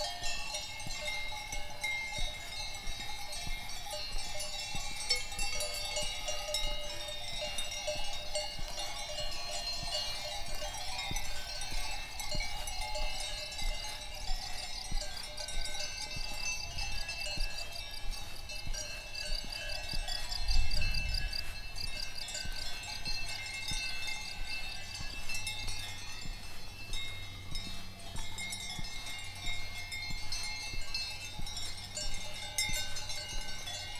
Chleiniweid/ kleine Weide
Kuhweide Chleiniweid, Kuhglocken, Sonntagswandersocken